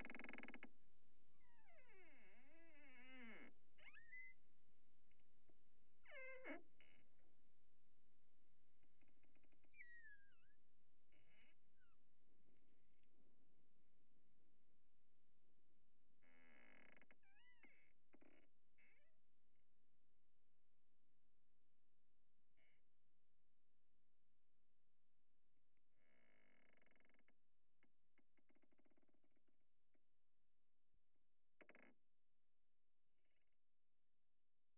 Eucalyptus trees rub together in the wind